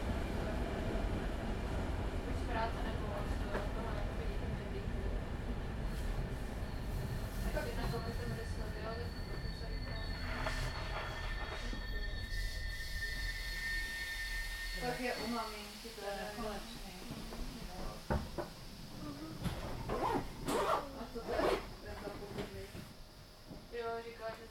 Train drumming and clacking, people chatting, passengers getting on.
Zoom H2n, 2CH, handheld.
Jihovýchod, Česko, 25 July 2019, 10:43